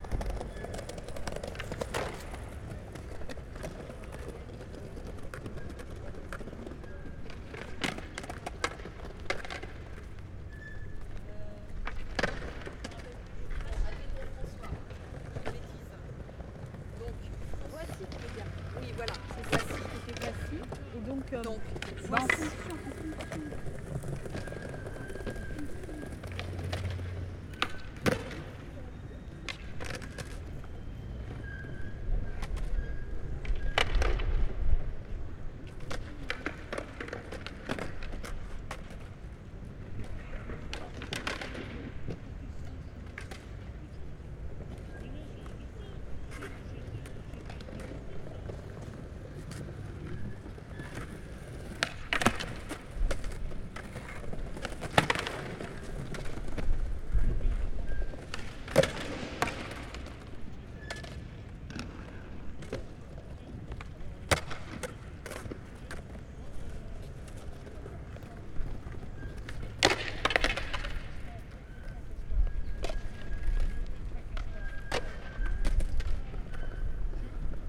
Créteil, France - Créteil Skaters
Skateboarders and roller-bladers practising outside the Mairie de Créteil; something straight out of Tativille.